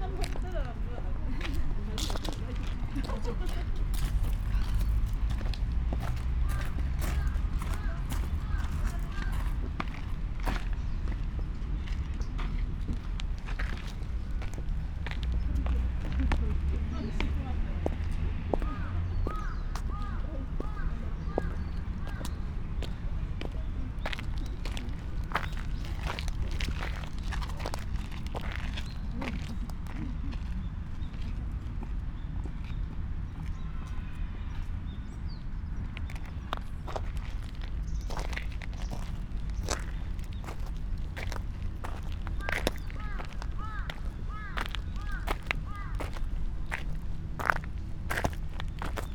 path, Shoseien, Kyoto - ...
garden sonority, steps, gravel path